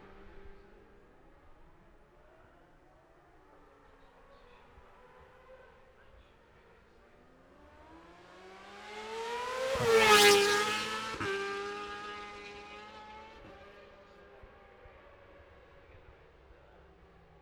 {"title": "Jacksons Ln, Scarborough, UK - gold cup 2022 ... 600 practice ...", "date": "2022-09-16 11:10:00", "description": "the steve henshaw gold cup ... 600 group one and group two practice ... dpa 4060s on t-bar on tripod to zoom f6 ...", "latitude": "54.27", "longitude": "-0.41", "altitude": "144", "timezone": "Europe/London"}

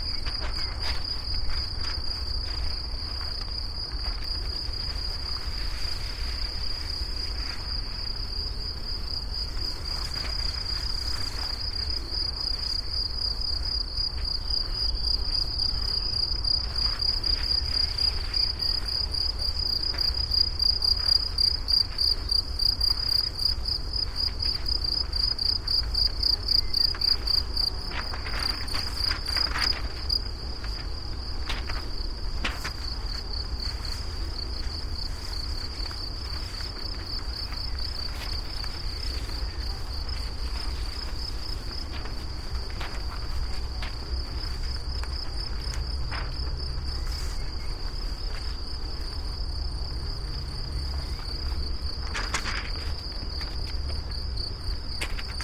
{"title": "path of seasons, Piramida, Maribor, Slovenia - spring", "date": "2013-05-29 15:33:00", "description": "walk with two long strips of thin paper ... which are softly touching peaks of high grass in late may, crickets, birds, flies, butterflies, dragonflies, wind, distant traffic noise and much more ...", "latitude": "46.57", "longitude": "15.65", "altitude": "376", "timezone": "Europe/Ljubljana"}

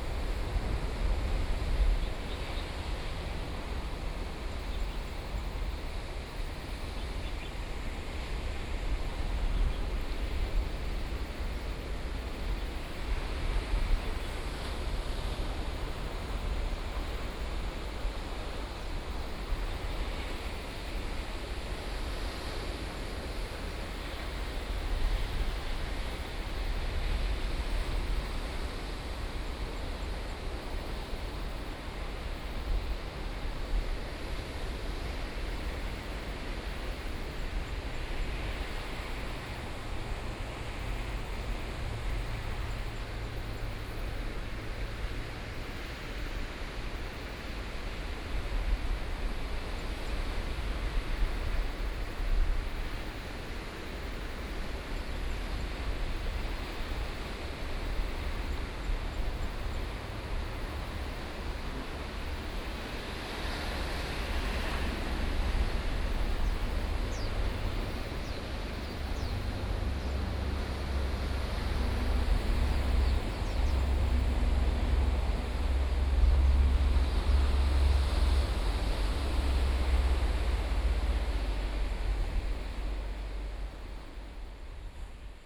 {"title": "三貂角, New Taipei City - Sound of the waves", "date": "2014-07-21 14:27:00", "description": "Sound of the waves, Small fishing village, Traffic Sound, Very hot weather\nSony PCM D50+ Soundman OKM II", "latitude": "25.01", "longitude": "122.00", "altitude": "10", "timezone": "Asia/Taipei"}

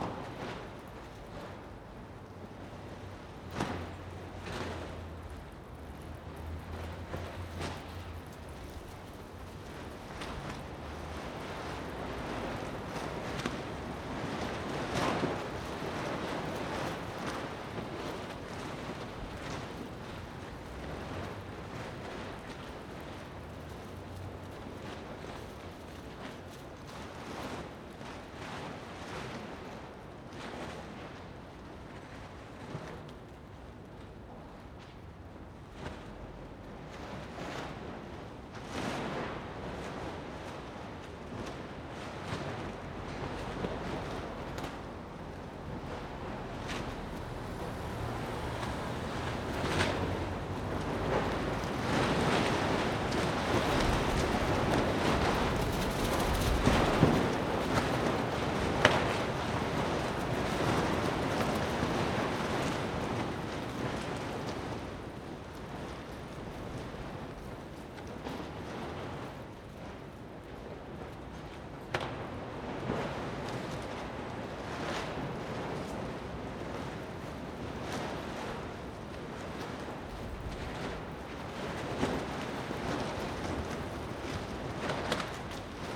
{"title": "Gotenburger Str., Berlin, Deutschland - Gotenburger Strasse, Berlin - Tarp on a scaffold flapping in the wind", "date": "2017-03-18 15:04:00", "description": "A pretty windy day in Berlin. The tarp covering a very large scaffold at the school building is flapping in the wind. Some parts are already loose, there is also a small plastic bag blowing up and flattering in the wind. From time to time there is also deep whistling sound: the scaffolding tubes are blown by the wind.\n[Beyerdynamic MCE 82, Sony PCM-D100]", "latitude": "52.56", "longitude": "13.38", "altitude": "43", "timezone": "Europe/Berlin"}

